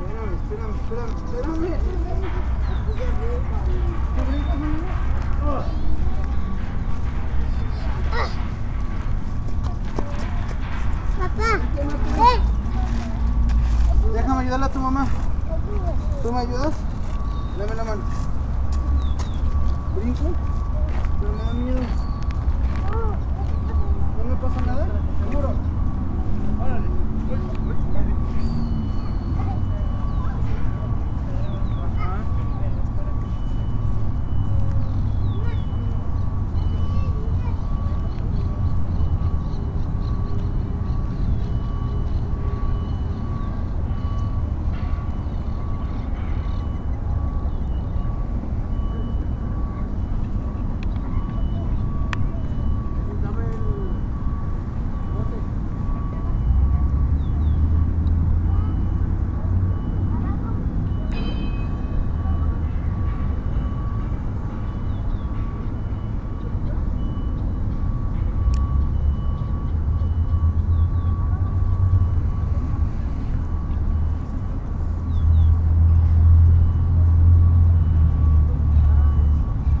Hacienda del Campestre, Hacienda del Campestre, León, Gto., Mexico - Parque de Los Cárcamos, caminando despacio desde el lago a la puerta de Adolfo López Mateos.
Parque de Los Cárcamos, walking slowly from the lake to Adolfo López Mateos’ door.
I made this recording on october 2nd, 2021, at 1:46 p.m.
I used a Tascam DR-05X with its built-in microphones and a Tascam WS-11 windshield.
Original Recording:
Type: Stereo
Esta grabación la hice el 2 de octubre de 2021 a las 13:46 horas.
Guanajuato, México, 2 October 2021, ~14:00